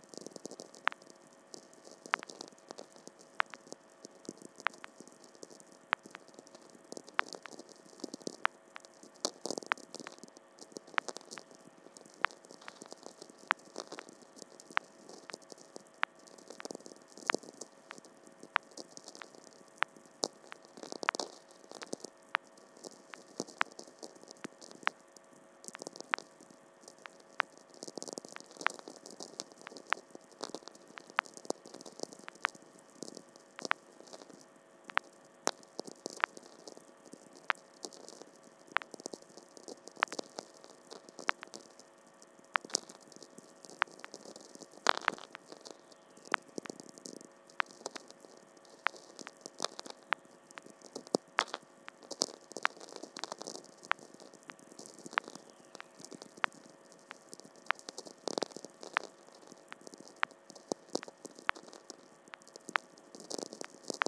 Baltakarčiai, Lithuania, VLF listening
some "whistlers" heard. and rhytmic clicks of "electric shepherd" in the meadow
Utenos rajono savivaldybė, Utenos apskritis, Lietuva, 10 August 2022